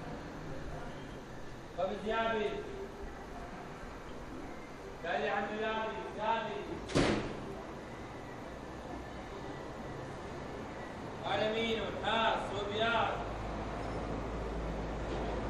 :jaramanah: :street vendor I: - two
Syria, 16 October 2008